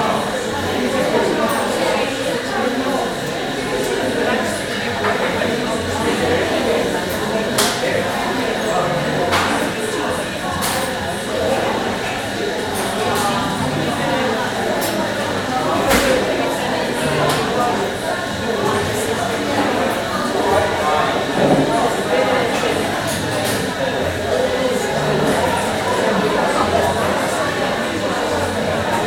Newport, Isle of Wight, UK - Art centre cafe noise
ambient cafe noise, many indistinct conversations, occasional sound of coffee machine and crockery, heavy rain outside. H2n recorder.